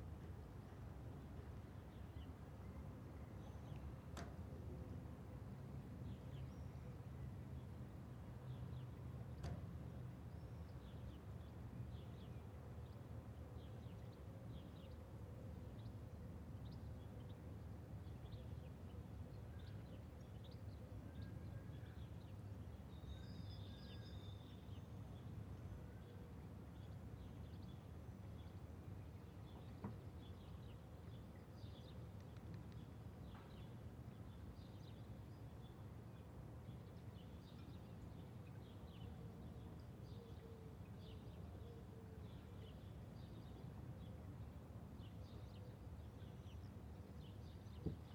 Rue de lArmide, La Rochelle, France - P@ysage Sonore - Landscape - La Rochelle COVID Flight of semi distant bells 9h
Bus, cars, pedestrians and at 4 ' > 9 o'clock, and flight of bells
4 x DPA 4022 dans 2 x CINELA COSI & rycote ORTF . Mix 2000 AETA . edirol R4pro